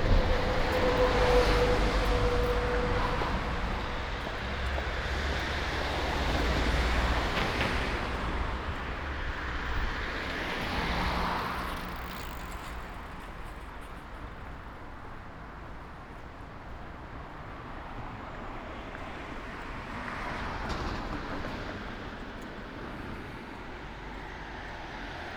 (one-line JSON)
{"title": "Ascolto il tuo cuore, città, I listen to your heart, city, Chapter LXIV - Shopping Saturday afternoon in the time of COVID19 Soundwalk", "date": "2020-05-02 17:19:00", "description": "\"Shopping on Saturday afternoon in the time of COVID19\" Soundwalk\nChapter LXIV of Ascolto il tuo cuore, città, I listen to your heart, city\nSaturday May 2nd 2020. Shopping in district of San Salvario, Turin, fifty three days after emergency disposition due to the epidemic of COVID19.\nStart at 5:19 p.m., end at h. 6:03 p.m. duration of recording 44’20”\nThe entire path is associated with a synchronized GPS track recorded in the (kml, gpx, kmz) files downloadable here:", "latitude": "45.06", "longitude": "7.68", "altitude": "245", "timezone": "Europe/Rome"}